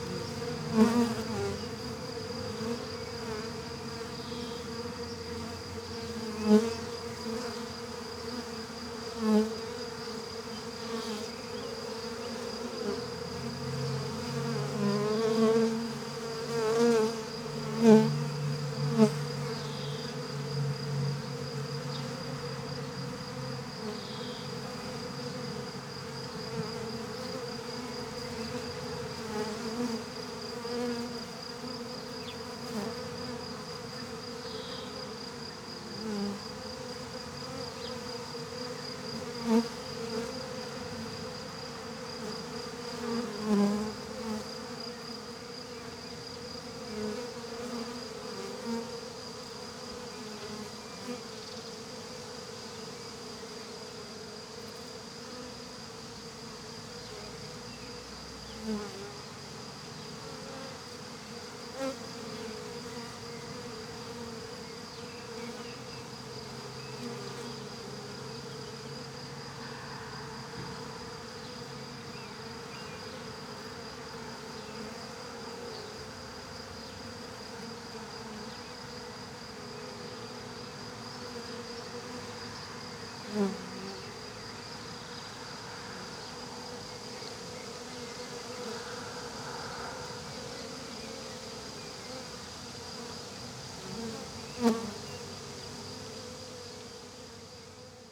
{"title": "Obertraun, Obertraun, Österreich - Bees in the orchard", "date": "2022-05-26 15:46:00", "description": "Bees swarm out to collect around a new queen bee", "latitude": "47.56", "longitude": "13.69", "altitude": "513", "timezone": "Europe/Vienna"}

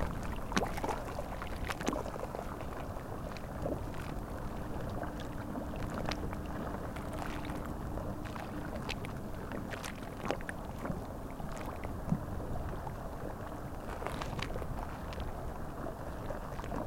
{"title": "Berville-sur-Mer, France - Waves on the Seine river", "date": "2016-07-21 14:00:00", "description": "Waves on the Seine river, during the high tide.", "latitude": "49.44", "longitude": "0.36", "altitude": "4", "timezone": "Europe/Paris"}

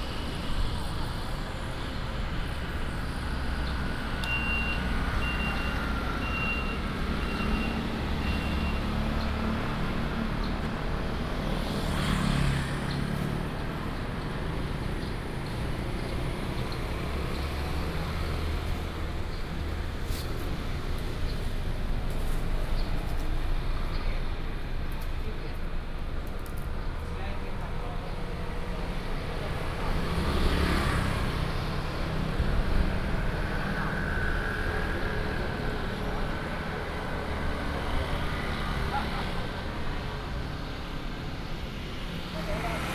{"title": "Sec., Zhongshan Rd., 礁溪鄉 - walking on the Road", "date": "2014-07-07 09:15:00", "description": "Traditional Market, Very hot weather, Traffic Sound", "latitude": "24.83", "longitude": "121.77", "altitude": "15", "timezone": "Asia/Taipei"}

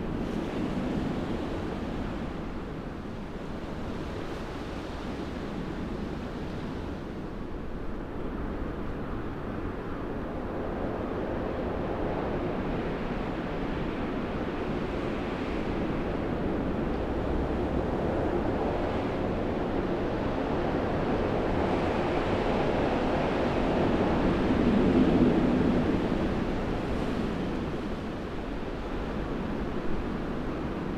{"title": "Crossroads, The Common, Cranleigh, UK - Storm Eunice 18/02/22 11am", "date": "2022-02-18 11:00:00", "description": "A bit of wind noise would be an understatement. Got a bit nervous standing out in that, the locals must think I'm nuts!", "latitude": "51.14", "longitude": "-0.50", "altitude": "53", "timezone": "Europe/London"}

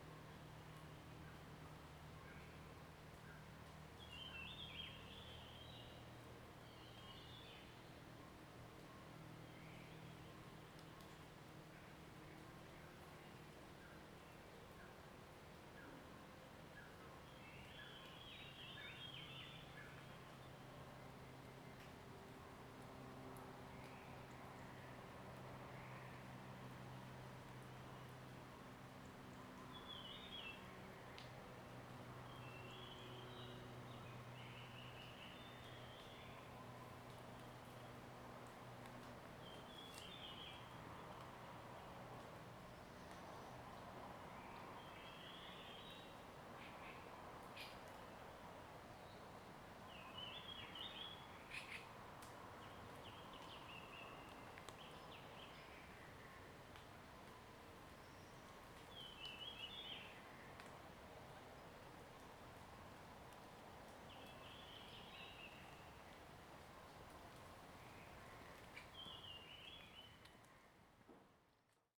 {"title": "Shuishang Ln., Puli Township 桃米里 - Bird sound", "date": "2016-03-26 06:11:00", "description": "Morning in the mountains, Bird sounds, Traffic Sound\nZoom H2n MS+XY", "latitude": "23.94", "longitude": "120.92", "altitude": "534", "timezone": "Asia/Taipei"}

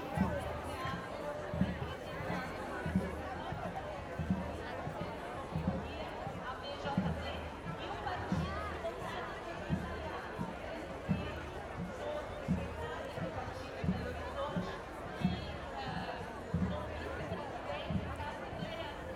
{"title": "Praça do Papa, Belo Horizonte - Demonstration in Brazil to preserve the Amazonian forest", "date": "2019-08-25 11:30:00", "description": "In Belo Horizonte at \"Praza do Papa\" on last Sunday, people doing a demonstration to preserve the forest. Voices of the crowd, somebody talking on microphone and some drums on the left.\nRecorded with an ORTF setup Schoeps CCM4x2\nOn a MixPre6 Sound Devices\nSound Ref: BR-190825-02\nGPS: -19.955654, -43.914702", "latitude": "-19.96", "longitude": "-43.91", "altitude": "1096", "timezone": "America/Sao_Paulo"}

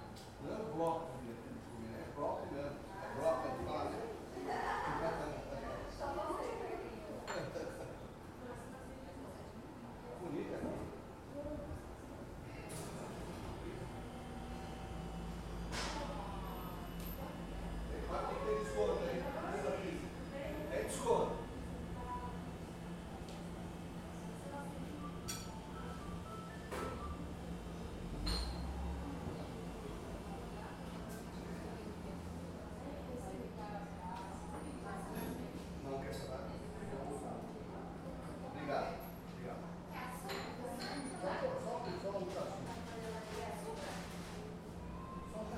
{"title": "R. Sebastião Coco - Nova Petrópolis, São Bernardo do Campo - SP, 09771-070, Brasil - Coffee Shop", "date": "2019-05-02 16:34:00", "description": "This is a Coffee Shop called Book e Café where is located next an elementary school. You can listen to kids playing around sometimes. It was recorded by a Tascam DR-05 placed on a table next a big glass window.", "latitude": "-23.70", "longitude": "-46.54", "altitude": "770", "timezone": "America/Sao_Paulo"}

